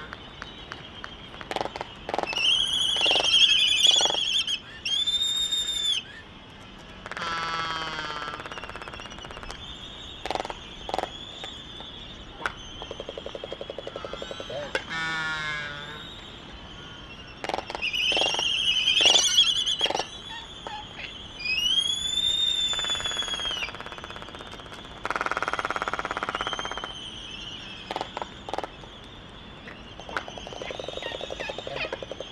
United States Minor Outlying Islands - Laysan albatross dancing ...
Laysan albatross dancing ... Sand Island ... Midway Atoll ... calls and bill clapperings ... open Sony ECM 959 one point stereo mic to Sony Mini disk ... warm ... sunny ... blustery morning ...
27 December